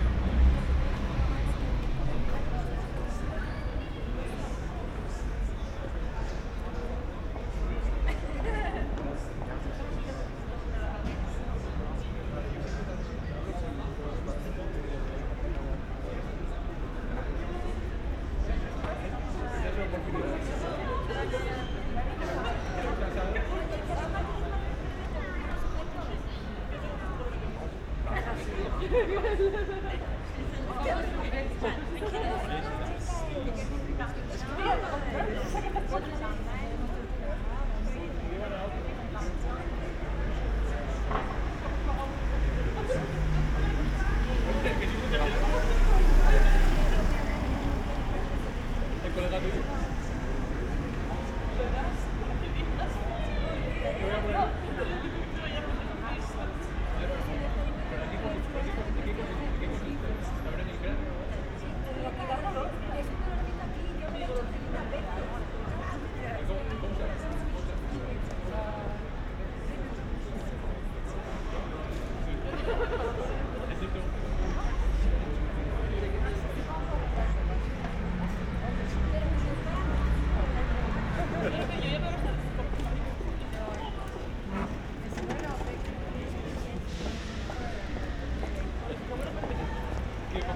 Rue Beaumont, Uewerstad, Luxemburg - street corner, outside pub ambience
Rue Beaumont, Brasserie Vis à Vis, sitting outside a the street corner, weekend ambience
(Olympus LS5, Primo EM172)